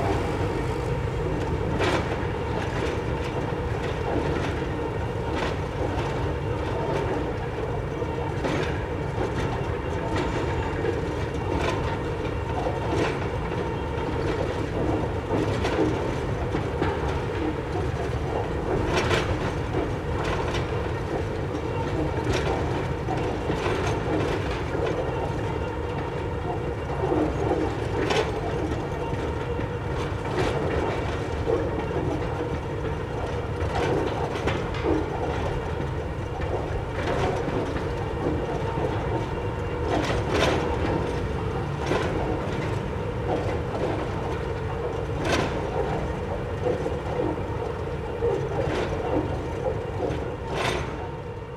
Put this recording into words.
Sound from construction site, Rode NT4+Zoom H4n